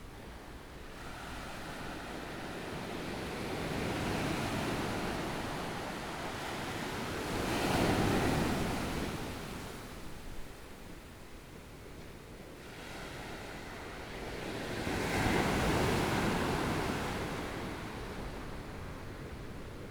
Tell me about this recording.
Sound waves, Please turn up the volume, Binaural recordings, Zoom H4n+ Soundman OKM II + Rode NT4